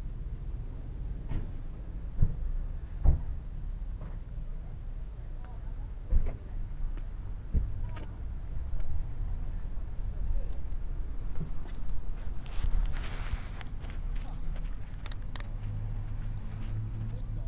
Sollefteå, Sverige - Youngsters on the parking lot
On the World Listening Day of 2012 - 18th july 2012. From a soundwalk in Sollefteå, Sweden. Youngsters at the parking lot plays music and sings along with the car stereo, shouting at some friends, starts the "EPA traktor" and moped at Coop Konsum shop in Sollefteå. WLD
Sollefteå, Sweden, 18 July, 20:10